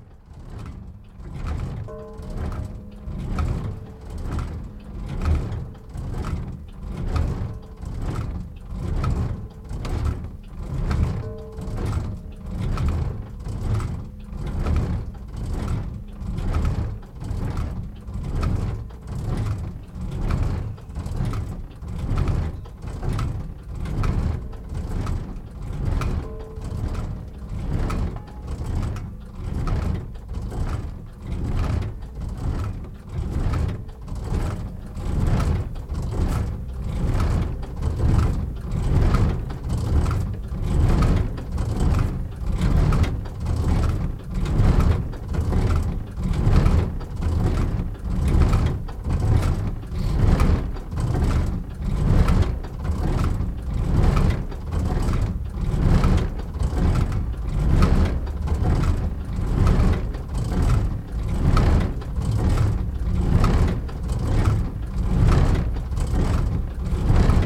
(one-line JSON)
{"title": "Le Bourg, Le Mage, France - Le mage - cloche de l'église", "date": "2020-02-20 10:00:00", "description": "Le Mage - département de l'Orne - Parc Naturel Régional du Perche\nMouvement manuel de la 2nd cloche\nRemerciement Cie AMA - Falaise", "latitude": "48.51", "longitude": "0.80", "altitude": "186", "timezone": "Europe/Paris"}